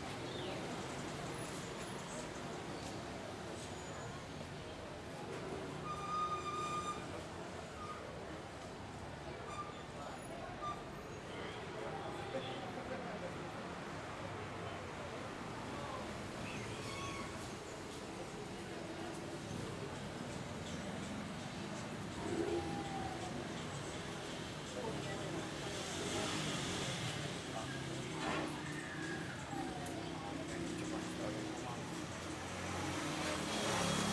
Los Alpes Cll. 30 entre Cra.82C y, Belén, Medellín, Antioquia, Colombia - MetroPlus, estacion Los Alpes.
Es un paisaje muy contaminado auditivamente, donde hace alarde el constante bullicio humano y la maquinaria destinada para el transporte. Lo cual opaca casi totalmente la presencia de lo natural y se yuxtapone el constante contaminante transitar humano.
17 September 2022, 04:40